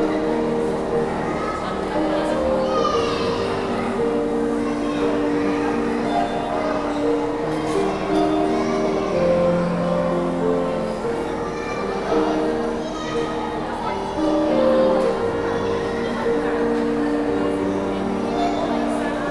Shopping Aricanduva - Avenida Aricanduva - Jardim Marilia, São Paulo - SP, Brasil - Pianista em uma praça de alimentação
Gravação de um pianista feita na praça de alimentação do Shopping Interlar Aricanduva no dia 06/04/2019 das 19:47 às 19:57.
Gravador: Tascam DR-40
Microfones: Internos do gravador, abertos em 180º